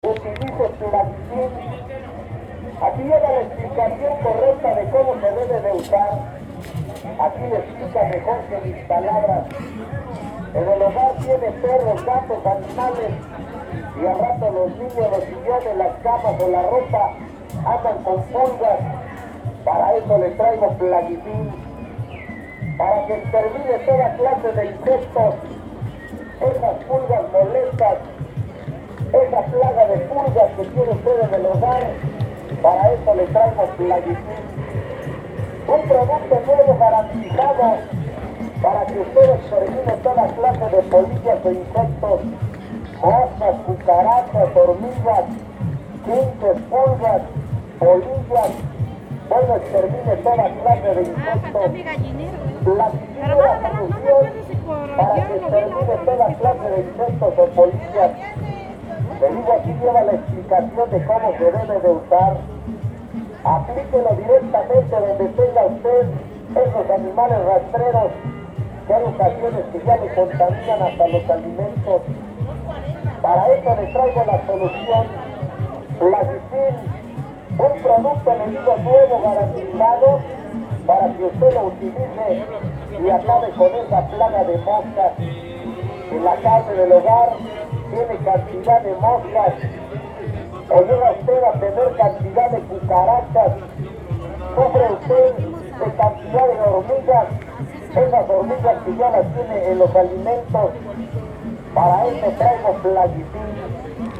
Centro, Ciudad de México, D.F., Mexico - Lice Medication
Street vendor selling lice medication